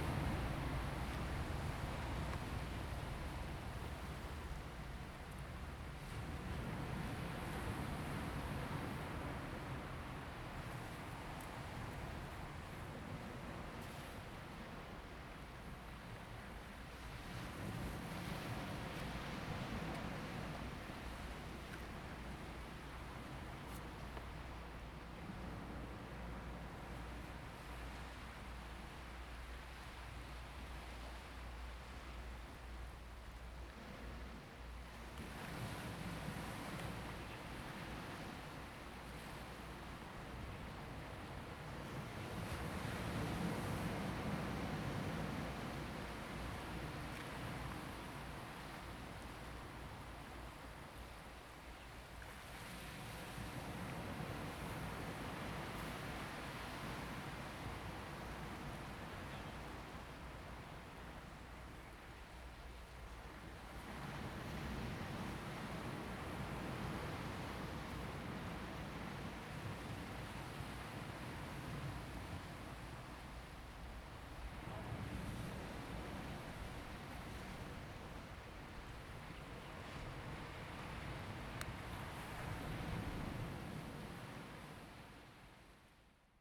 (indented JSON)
{
  "title": "南福村, Hsiao Liouciou Island - Sound of the waves",
  "date": "2014-11-01 14:16:00",
  "description": "Waves and tides\nZoom H2n MS +XY",
  "latitude": "22.32",
  "longitude": "120.36",
  "timezone": "Asia/Taipei"
}